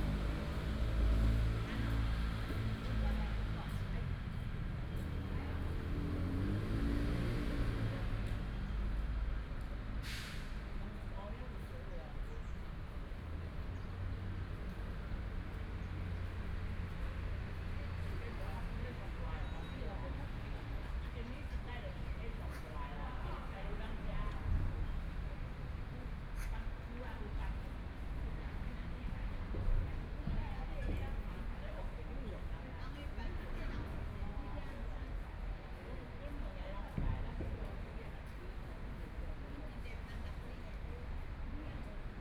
Environmental sounds, Traffic Sound, Birds, Voice chat between elderly